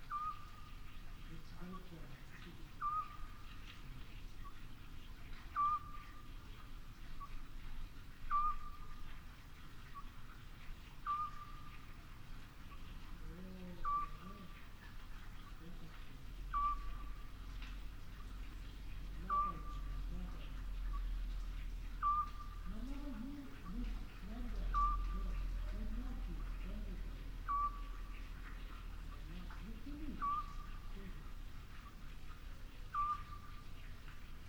Lachania, Rhodos, Griechenland - Lahania, Taverna Platanos and church square, Eurasian Scops Owl calling at night
Village center at night. The sound of water flowing from a well, and a Eurasian Scops Owl (Otus scops) calling in the bigger one of the Platana Trees at Taverna Platanos. Binaural recording. Artificial head microphone set up on the terasse. Microphone facing south east. Recorded with a Sound Devices 702 field recorder and a modified Crown - SASS setup incorporating two Sennheiser mkh 20 microphones.
Περιφέρεια Νοτίου Αιγαίου, Αποκεντρωμένη Διοίκηση Αιγαίου, Ελλάς, 22 October 2021